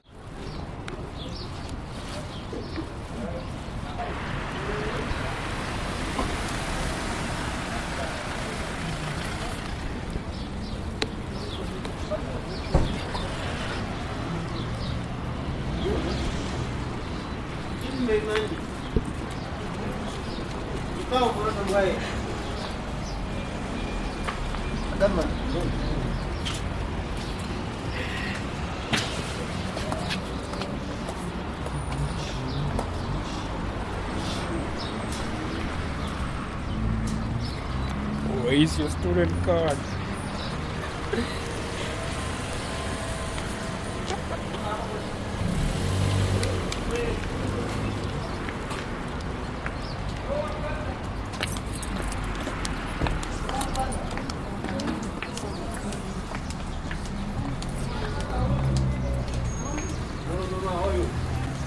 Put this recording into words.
ambience recording by NUST communication students, ZOOM H2n